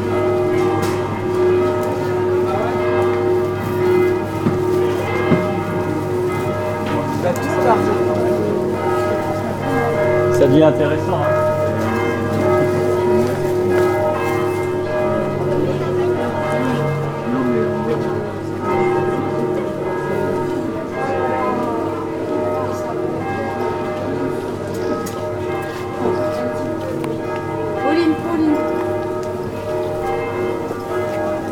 At the weekly market. The sound of the noon bells of the nearby old church and the market scene in one of the villages narrow roads.
international village scapes - topographic field recordings and social ambiences
L'Isle-sur-la-Sorgue, France, 25 August 2011, 18:40